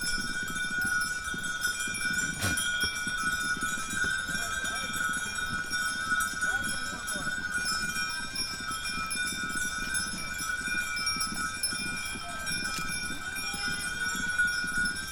Επαρ.Οδ. Αμυνταίου-Καστοριάς, Αετός, Ελλάδα - Bells
Bells In Aetos Greece
Αποκεντρωμένη Διοίκηση Ηπείρου - Δυτικής Μακεδονίας, Ελλάς, July 2021